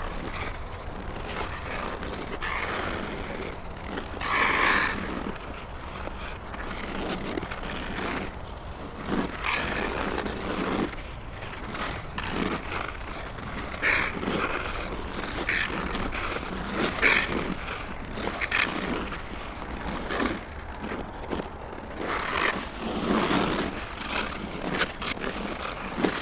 Ice skating from distance. Zoom H4n, AT835ST microphone.